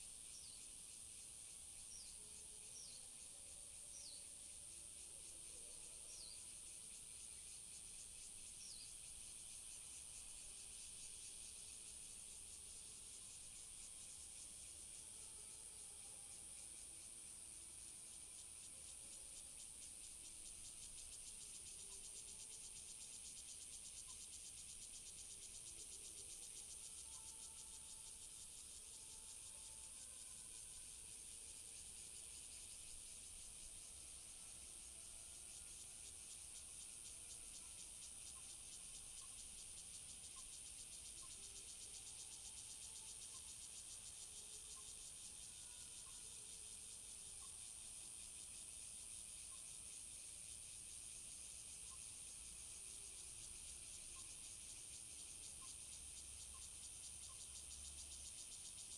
Ln., Sec., Yimin Rd., Xinpu Township - Near the tunnel
Near the tunnel, birds call, Cicadas sound, High speed railway, The train passes through, Zoom H6 XY
Hsinchu County, Taiwan, August 2017